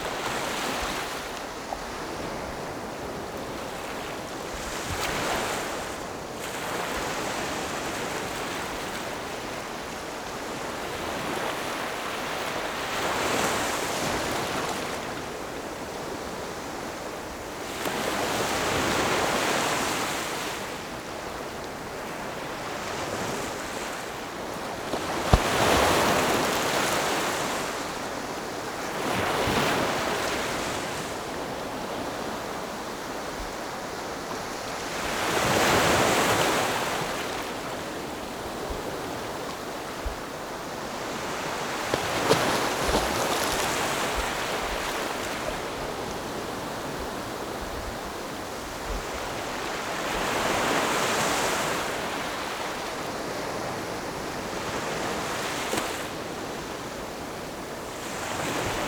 富基里, Shimen Dist., New Taipei City - The sound of the waves